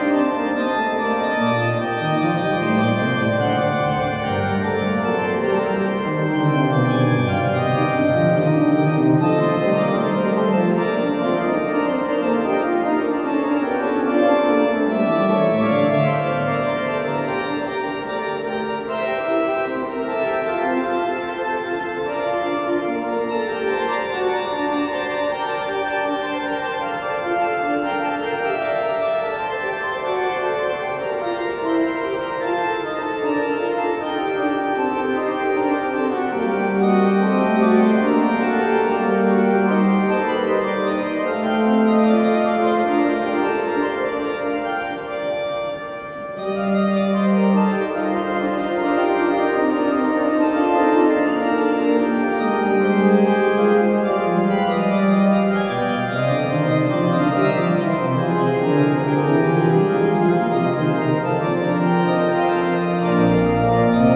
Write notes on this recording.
Two recordings made on Sunday July 12th 2015 in the Great Church, or Saint Bavo Church, in Haarlem. Recorded with a Zoom H2. I could not prepare this recording and create a proper set-up; you might hear some noises in the 2nd piece caused by me moving the mic... but I liked the piece too much to turn this recording down.